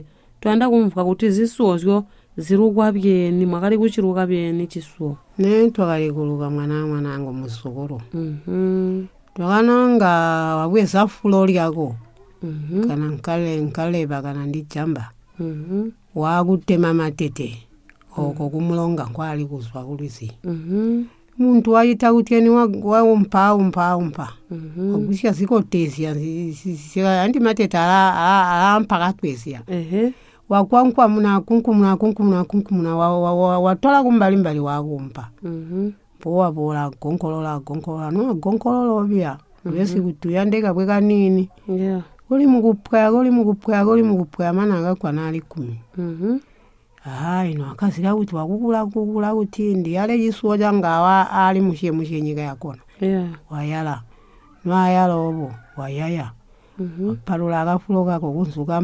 Chinonge, Binga, Zimbabwe - Banene, how did you used to weave those baskets...
Eunice asks her grandmother about how the BaTonga women used to weave the large, heavy-duty baskets. Banene describes how to prepare the Malala leaves (Palm leaves) for the weaving. These baskets are used by BaTonga women in the field work.